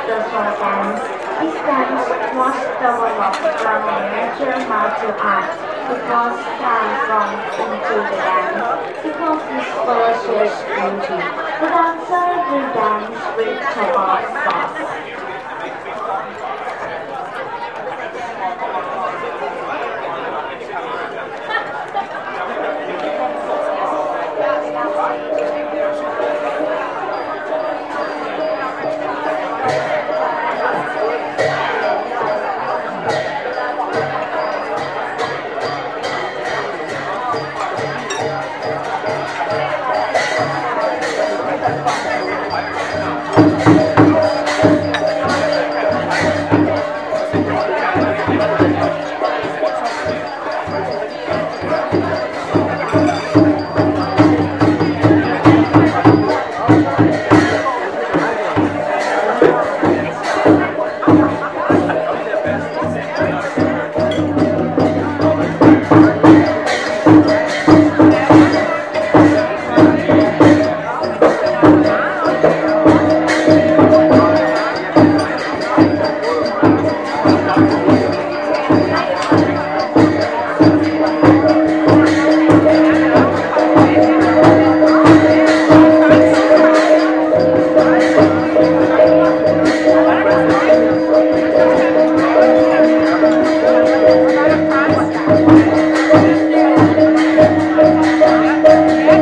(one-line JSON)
{"title": "เชียงใหม่, Thailand (Khong dance dinner) - เชียงใหม่, Thailand (Khong dance dinner) 3", "description": "Khong dance dinner in Old culture center, Chiang Mai; 26, Jan, 2010 (Sword dance)", "latitude": "18.75", "longitude": "98.97", "altitude": "303", "timezone": "Asia/Taipei"}